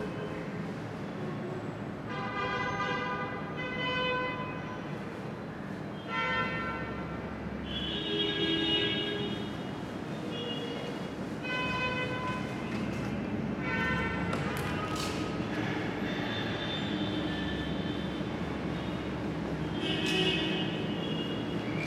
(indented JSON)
{
  "title": "Domtex building, Hamra, Lebanon - Beirut Apartment walk",
  "date": "2004-03-11 12:10:00",
  "description": "walking through empty apartment, car horns. Binaural recording, DPA mics",
  "latitude": "33.90",
  "longitude": "35.48",
  "altitude": "67",
  "timezone": "Asia/Beirut"
}